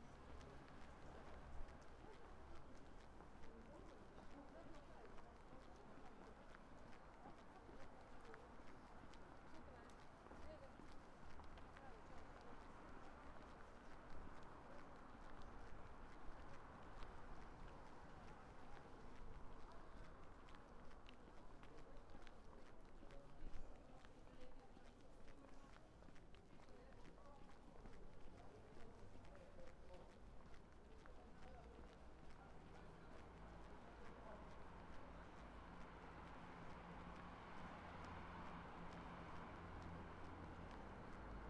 Rijeka, Croatia, Sub-zero SOund Walking - Sub-zero SOund Walking
January 2017